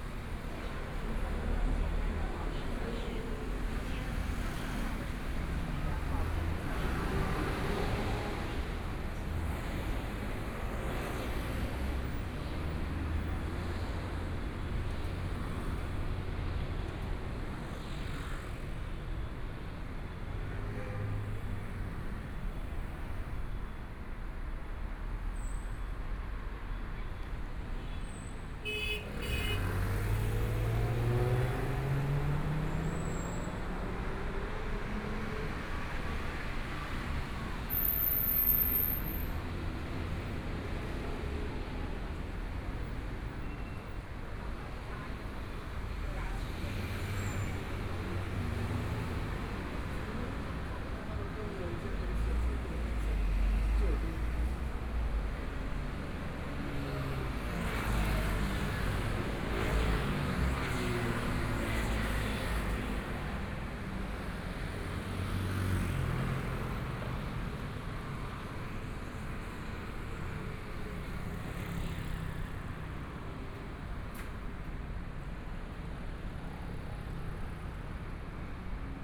Linsen N. Rd., Zhongshan Dist. - Walking on the road
Walking on the road, Environmental sounds, Traffic Sound, Binaural recordings, Zoom H4n+ Soundman OKM II
Taipei City, Taiwan, 6 February 2014, 13:36